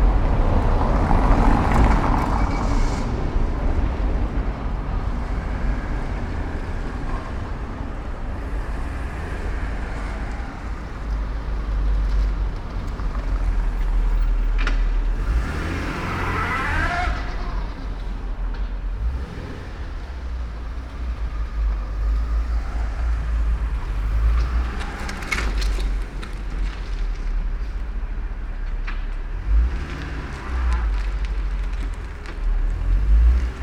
night owls, waitress of a café securing the tables and chairs outside with cables, cars passing by
the city, the country & me: february 27, 2014